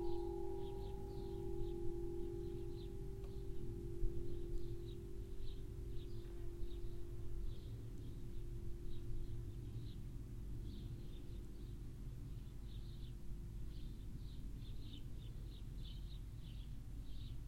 selscheid, church, bells
At the Saint-Amand chapelle in Selscheid. The ambience of the small town and the sound of the bells.
Selscheid, Kirche, Glocken
Bei der St. Armand-Kapelle in Selscheid. Die Umgebung der kleinen Ortschaft und das Läuten der Glocken.
Selscheid, église, cloches
À l’église Saint-Amand de Selscheid. L’atmosphère de la petite ville et le son de cloches.
Project - Klangraum Our - topographic field recordings, sound objects and social ambiences